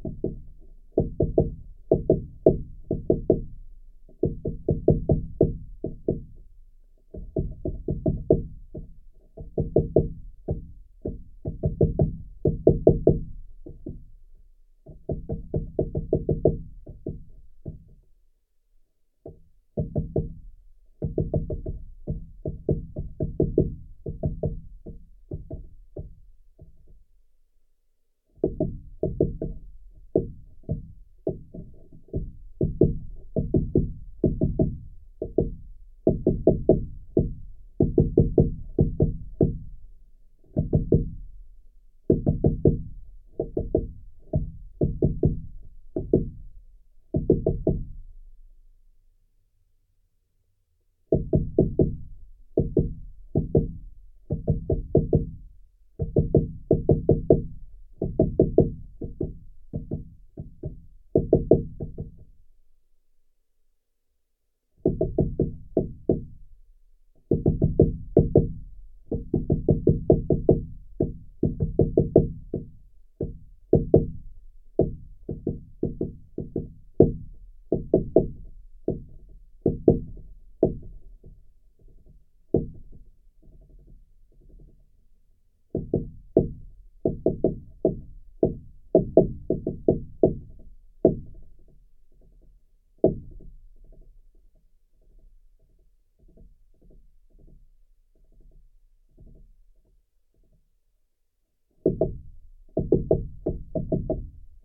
Geophone placed on the bottom of the dead pine trunk. Woodpecker on the top of the tree
Utenos apskritis, Lietuva, August 2022